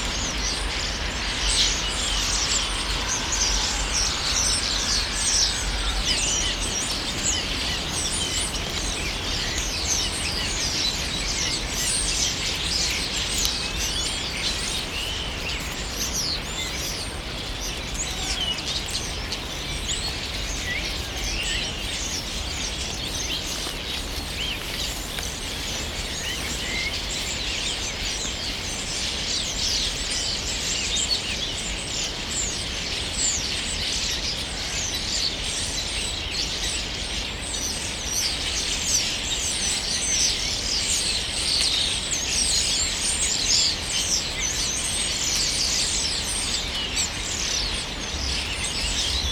Památník Boženy Němcové, Slovanský ostrov, Praha, Czechia - Spring gathering of starlings
Evening suddently arrived flogs of starlings to Prague. Recorded with Zoom H2N.
sonicity.cz
Hlavní město Praha, Praha, Česká republika, 7 April 2020, ~19:00